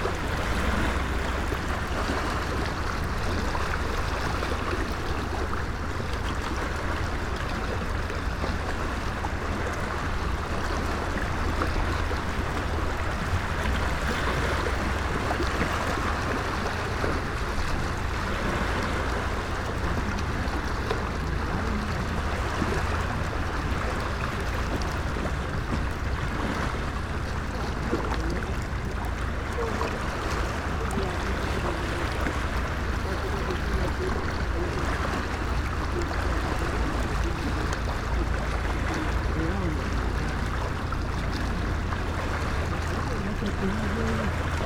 afternoon sea, Novigrad, Croatia - eavesdropping: under straw hat
as lazy cat would do - under a straw hat, listening to the sea voices